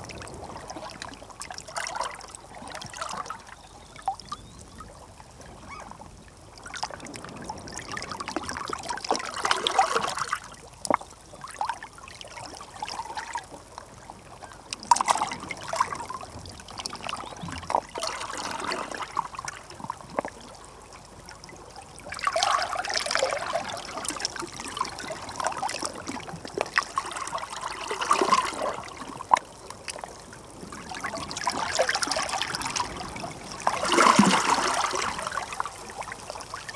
Gairloch, UK - Tide Pool below the Gairloch Free Church
Captured with a Sound Devices MixPre-3 and a stereo pair of DPA4060s.